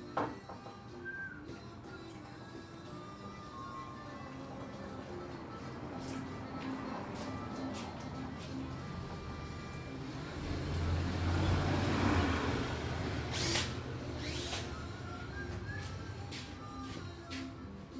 Potrero Hill, San Francisco, CA, USA - world listening day 2013
my contribution to the world listening day 2013